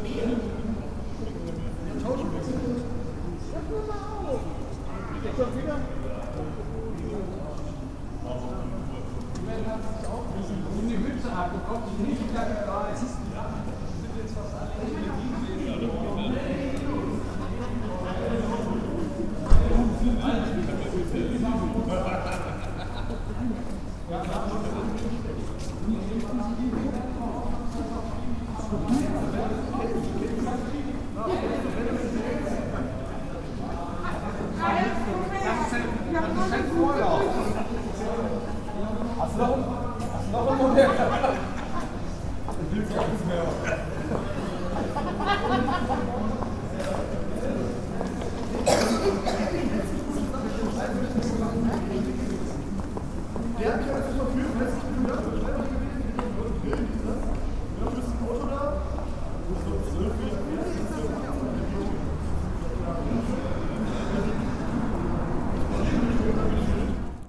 Steele, Essen, Deutschland - grendplatz nachts
essen-steele: grendplatz nachts